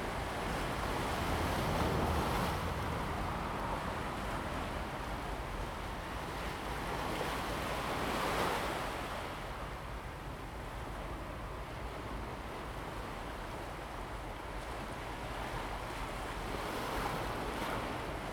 On the coast, Sound of the waves, Traffic sound, Early morning at the seaside
Zoom H2n MS+XY
Pingtung County, Taiwan